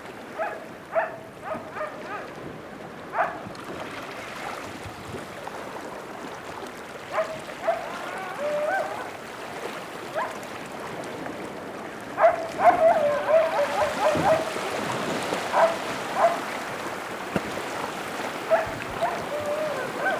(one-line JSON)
{"title": "Oqaluffiup Aqq., Ilulissat, Groenland - sea dog man", "date": "2001-06-20 14:14:00", "description": "rec near the Zion Baptist church on the waterfront. you can hear the sound of water, dogs and a man minding his little boat", "latitude": "69.22", "longitude": "-51.11", "altitude": "3", "timezone": "America/Godthab"}